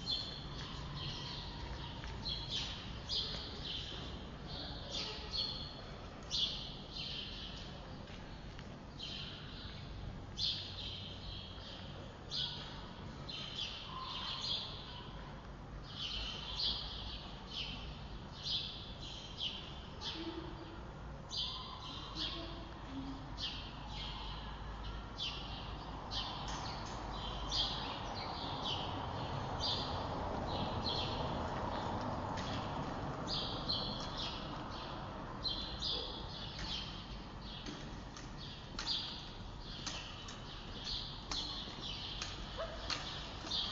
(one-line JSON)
{"title": "Früher Frühling die Vögel freuen sich", "description": "pure expression, no words.", "latitude": "52.50", "longitude": "13.40", "altitude": "39", "timezone": "Europe/Berlin"}